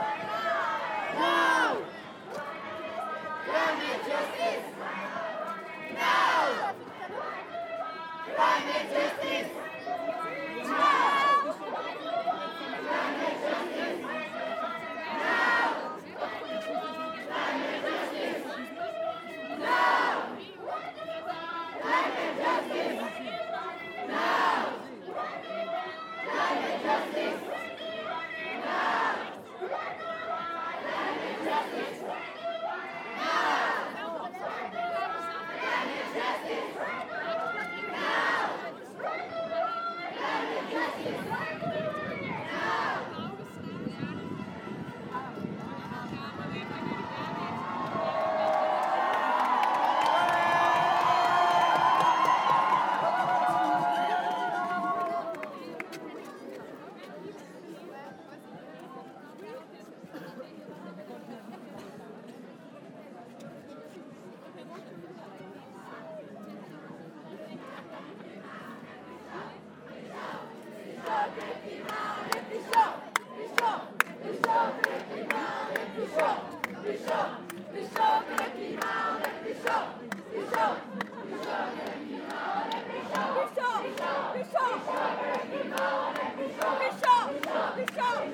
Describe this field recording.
Non-violent demonstration in Louvain-La-Neuve : Youth For Climate. The students boycott school and demonstrate in the street. They want actions from the politicians. Very much wind, a little rain and 3000 young people shouting.